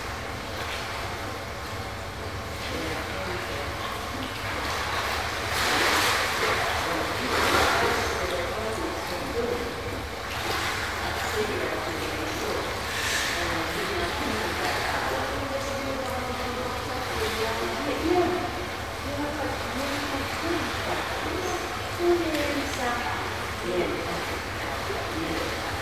budapest, dandár gyógyfürdő, thermal bath
inside a traditional hungarian thermal bath, some water splashes and conversations plus the hum from the heating
international city scapes and social ambiences